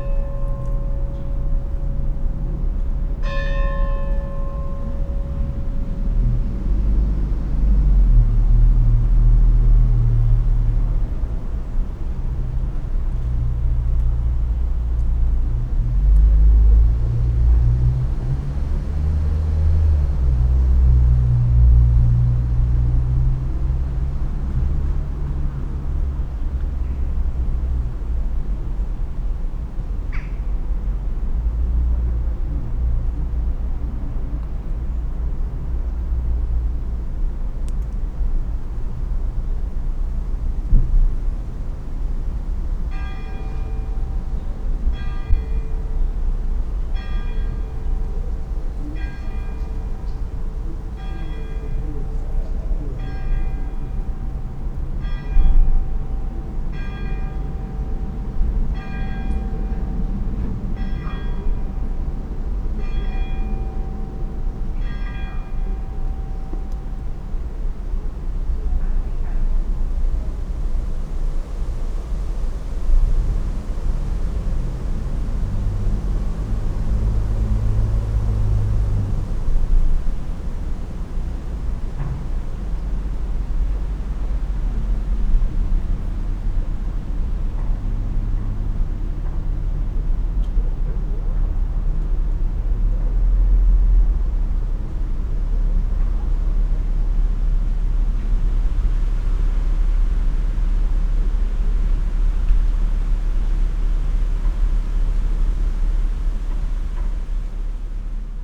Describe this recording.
It is windy in the large walled garden next to the church. To the right a mother sits talking on the phone. Her baby cries. She leaves passing the mics. A plane flies over. Noon comes and the bells sound.